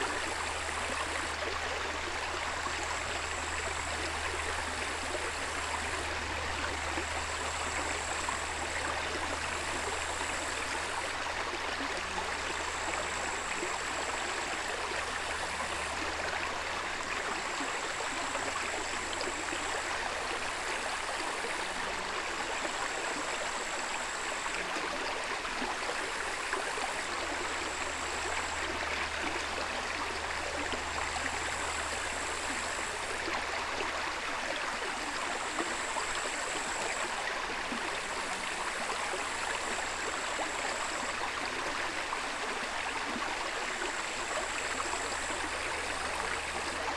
Inkūnai, Lithuania, river in the wood
Small, undisturbed, river in the wood
Utenos apskritis, Lietuva, 11 August 2022